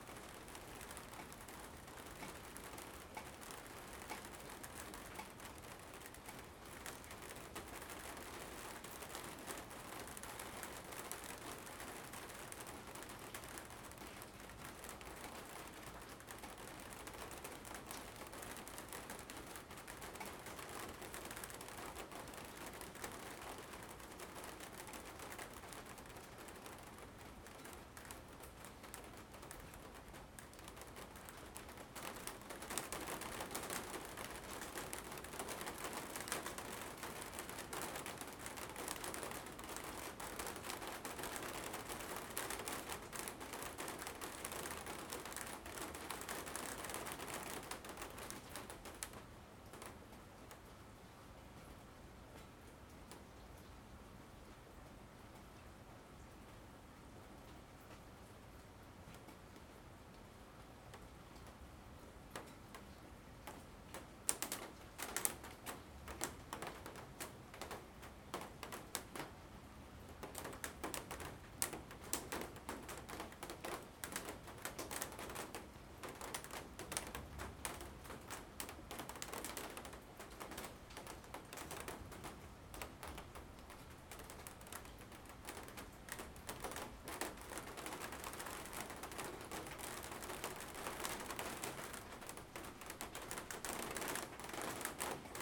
Rainstorm (and cat) from covered back porch.
20 August 2015, 22:00